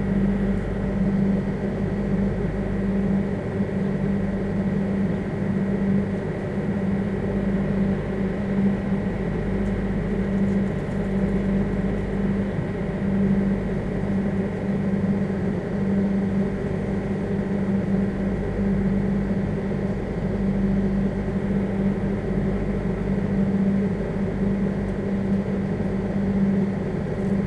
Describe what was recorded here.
the nearby Biergarten is powered by a diesel generator engine. checking the redundant sonic pattern by moving here and there. not exactly exiting, but since the beer garden seems to be established, it's sounds have become part of the soundscape at this spot, so. (Sony PCM D50, DPA4060)